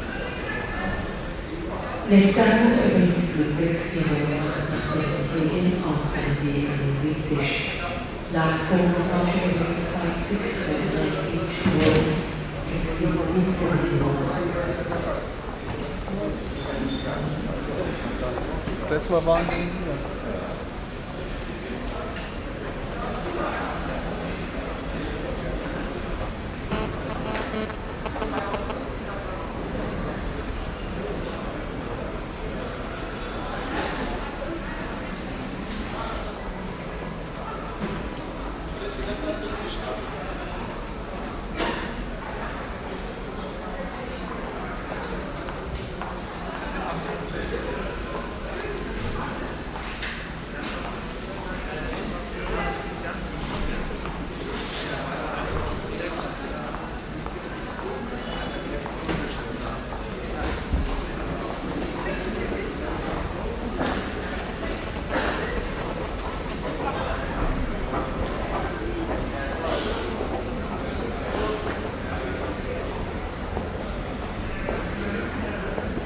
cologne - bonn, airport, eingangshalle, abflug - cologne - bonn, airport, eingangshalle, abflug
soundmap: köln/ nrw
atmo im eingangsbereich abflug des koeln - bonner flughafens, morgens - durchsagen, schritte, rollende koffer, mobilfunkfrequenzen und gespräche
project: social ambiences/ listen to the people - in & outdoor nearfield recordings - listen to the people
5 June 2008